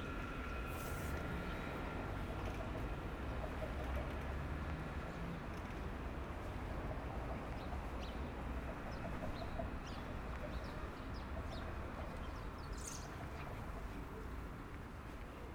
Tours, France - Anatole France station
Recording of the tramways passing by in the Anatole France station, and the Saint-Julien church ringing the hour of the day.